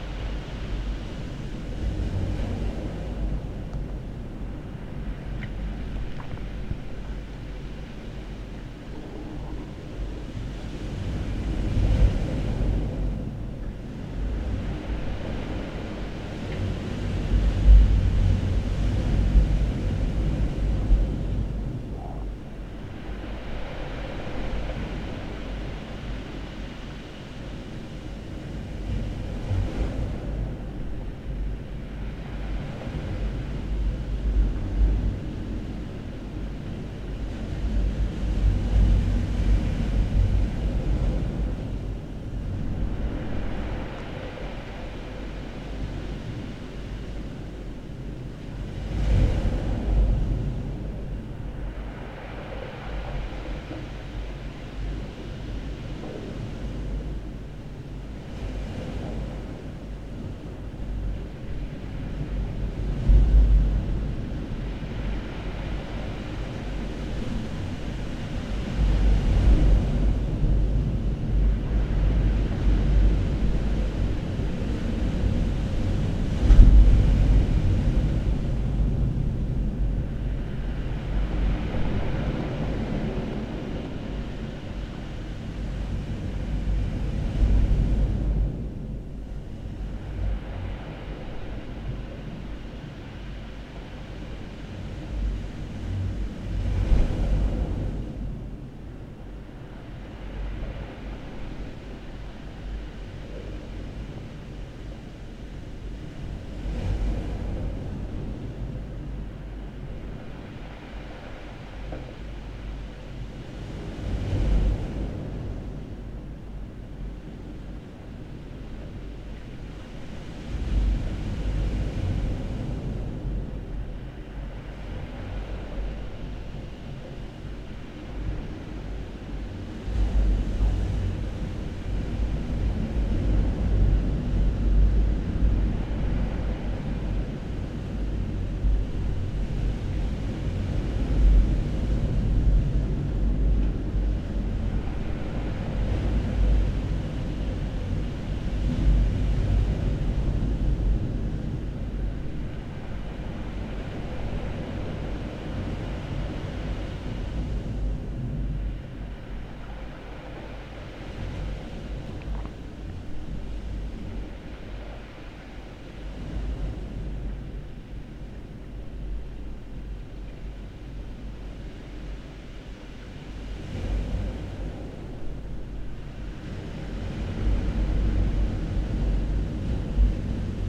Cala de la Higuera, Níjar, Almería, Spain - the ocean from inside the house
Binaural (use headphones!) recording of the turbulent ocean by night.
14 November 2016, ~21:00